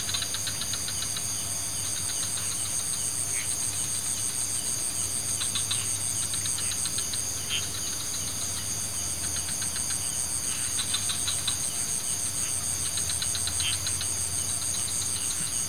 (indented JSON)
{
  "title": "Ubud, Bali, Indonesia - Night frogs and crickets",
  "date": "2014-04-13 22:00:00",
  "latitude": "-8.49",
  "longitude": "115.26",
  "altitude": "257",
  "timezone": "Asia/Makassar"
}